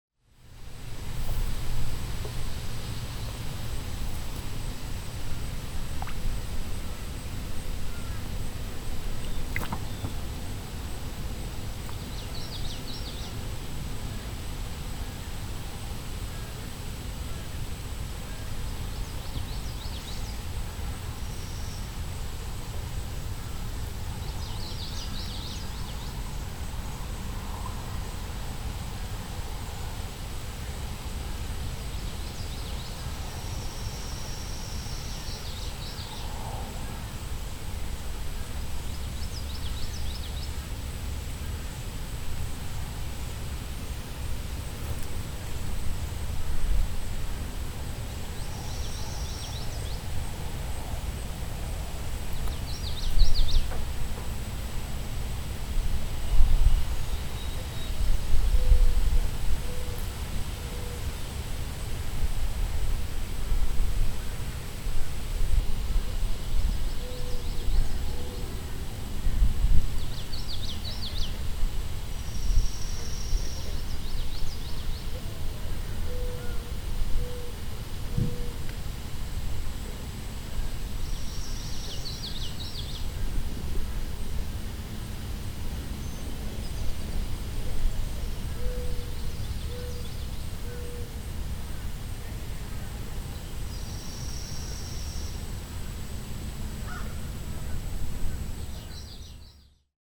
The bloops you hear at the beginning of this recording are little fish in the pond, coming up to nibble my finger I dipped in the water!